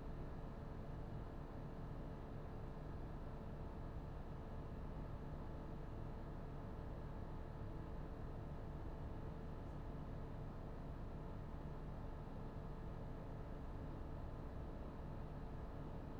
Rijeka, Croatia - Warming Machine
EM 172 (AB60) -> PCM D50